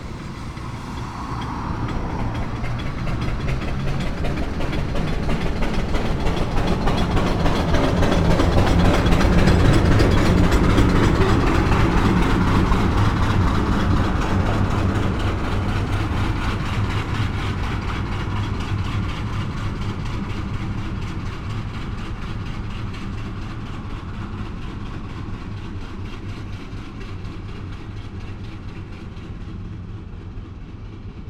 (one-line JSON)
{"title": "Recordings in a Fatsia, Malvern, Worcestershire, UK - Steam Engine Passes", "date": "2021-07-31 16:07:00", "description": "Strangely a steam engine drove up the road so I put out the mics and another one came by.\nMixPre 6 II with 2 x Sennheiser MKH 8020s protected in a home made windjammer.", "latitude": "52.08", "longitude": "-2.33", "altitude": "120", "timezone": "Europe/London"}